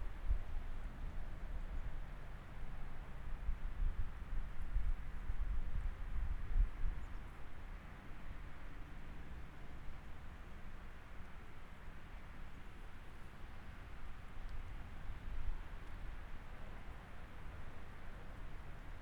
Bald Eagle Regional Park, County Rd, White Bear Township, MN, USA - Bald Eagle Regional Park
Ambient sounds of the parking lot of the Bald Eagle Regional park. Road noise from nearby Highway 61, some birds, and vehicles coming into the parking lot can be heard.
Recorded using a Zoom H5